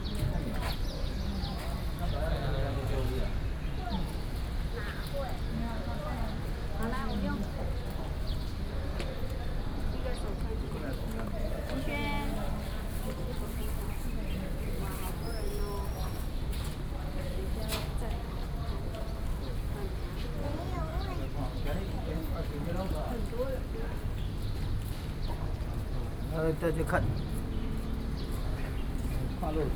Yingge Station, New Taipei City - In the station platform
In the station platform, Train arrival platform
Sony PCM D50+ Soundman OKM II